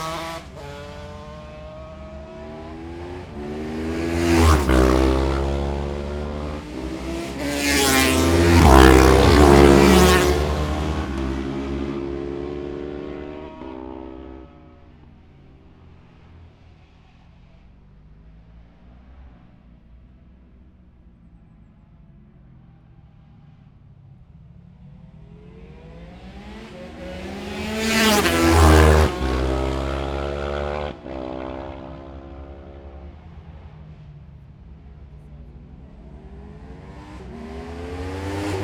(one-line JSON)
{"title": "Scarborough, UK - motorcycle road racing 2012 ...", "date": "2012-04-15 11:32:00", "description": "600-650cc twins qualifying ... Ian Watson Spring Cup ... Olivers Mount ... Scarborough ...\nopen lavalier mics either side of a furry table tennis bat used as a baffle ...grey breezy day ...", "latitude": "54.27", "longitude": "-0.41", "altitude": "147", "timezone": "Europe/London"}